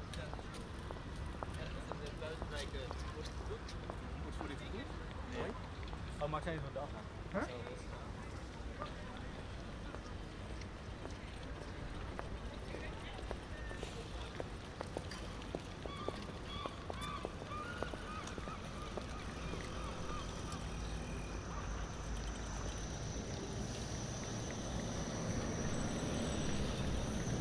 Buitenhof, Den Haag, Nederland - Het Buitenhof, The Hague
General atmosphere, traffic, seagulls and pedestrians on Het Buitenhof in The Hague. Recorded March 4th 2014. Recorded with a Zoom H2 with additional Sound Professionals SP-TFB-2 binaural microphones.